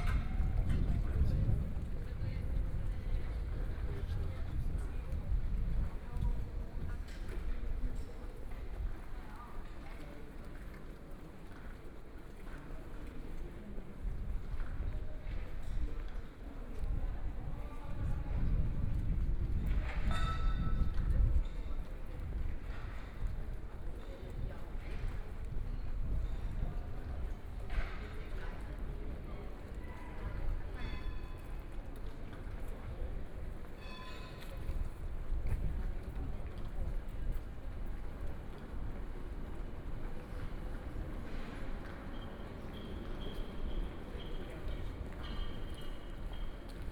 Odeonsplatz, Munich 德國 - soundwalk
walking in the Street, Street music, Pedestrians and tourists
Munich, Germany